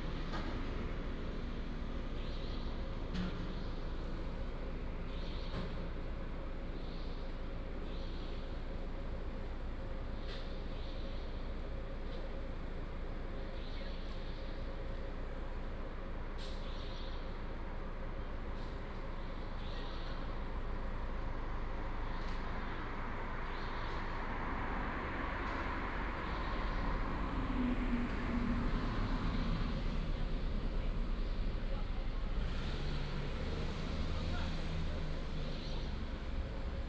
沿海公路312號, Linbian Township, Pingtung County - Late night street
Night outside the convenience store, Late night street, Traffic sound, Seafood Restaurant Vendor, Bird cry
Binaural recordings, Sony PCM D100+ Soundman OKM II
Pingtung County, Taiwan, 28 March